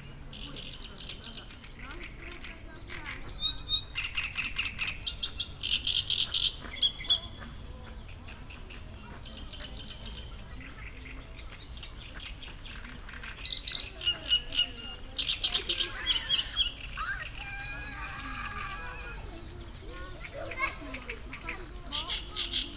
Morasko, close to Campus UAM, Moraskie ponds - teenager ducks
(binaural) many different birds sharing space around the pond. a group of young ducks running right by my feet. as usual plenty of people resting at the pond, walking around, feeding the birds.